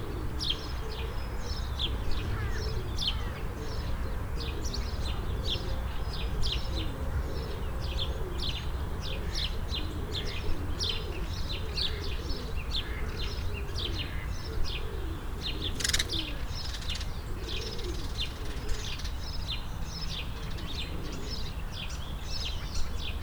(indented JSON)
{
  "title": "Waligórskiego, Wrocław, Polska - Covid-19 Pandemia",
  "date": "2020-04-12 13:20:00",
  "description": "Covid 19 at Le Parc Południowy, est un parc paysager de la ville de Wrocław situé dans le sud de la ville (arrondissement de Krzyki - Quartier de Borek). Il a une grande valeur de composition et de dendrologie.\nParmi les spécimens remarquables du parc on peut citer : le taxodium (Taxodium distichum), le tulipier de Virginie (Liriodendron tulipifera), le noyer blanc d'Amérique (Carya ovata) et une espèce que l'on rencontre rarement en Pologne, le marronnier d'Inde à feuilles digitées (Aesculus hippocastanum Digitata).",
  "latitude": "51.08",
  "longitude": "17.01",
  "altitude": "127",
  "timezone": "Europe/Warsaw"
}